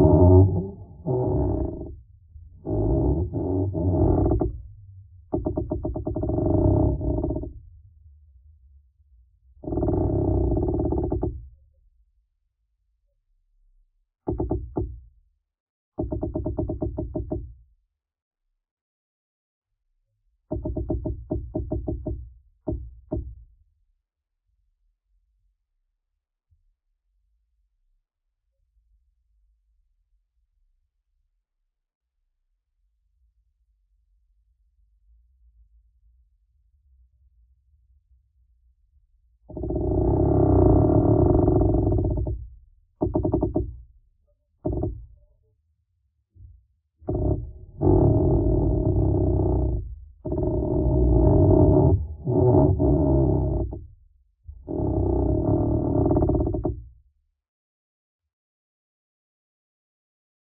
lake Kertuoja, Lithuania, a tree
close examination of a tree in a wind. contact microphones